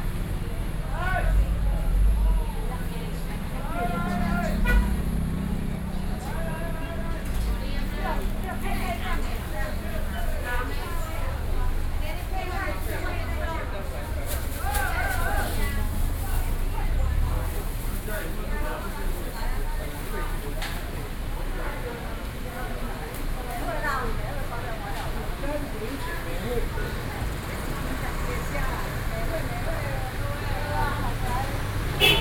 Xinyi Rd., Xizhi Dist., New Taipei City - Traditional markets
New Taipei City, Taiwan, 4 November 2012, ~07:00